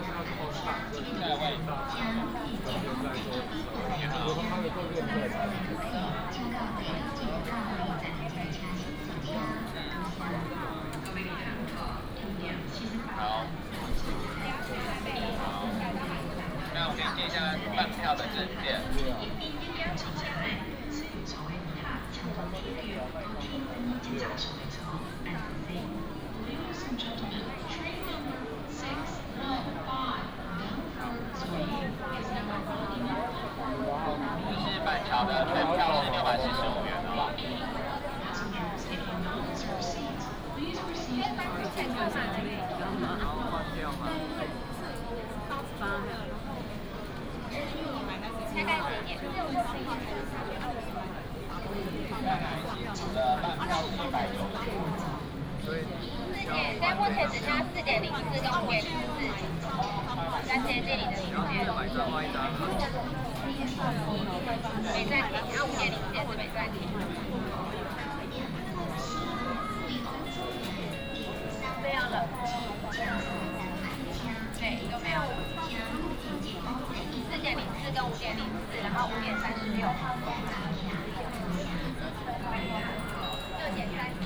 HSR Taichung Station, Taiwan - Ticket counter at the station
Ticket counter at the station, Station message broadcast
Binaural recordings, Sony PCM D100+ Soundman OKM II